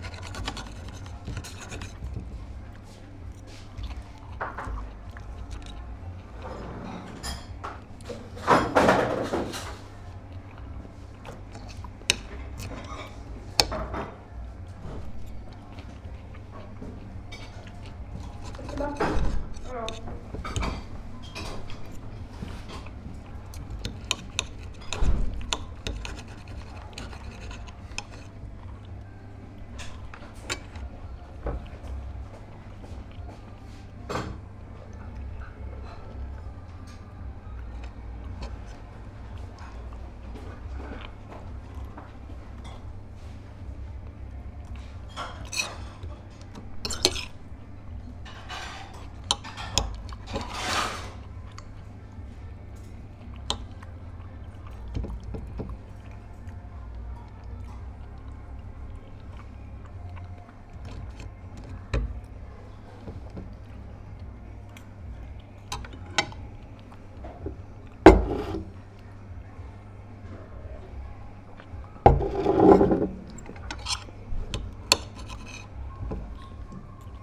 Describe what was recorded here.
Inside the cafeteria in Baltimarket(Baltijaam). Sounds of myself and other customers eating. (jaak sova)